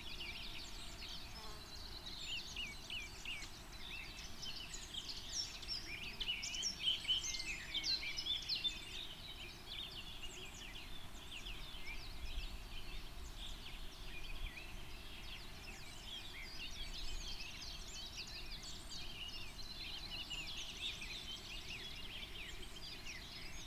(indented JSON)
{
  "title": "Dlouhý Důl, Krásná Lípa, Czechia - Birds dawn chorus and wesps",
  "date": "2020-05-10 07:13:00",
  "description": "On the spot of former pond. bird chorus and wesps levitationg",
  "latitude": "50.92",
  "longitude": "14.47",
  "altitude": "392",
  "timezone": "Europe/Prague"
}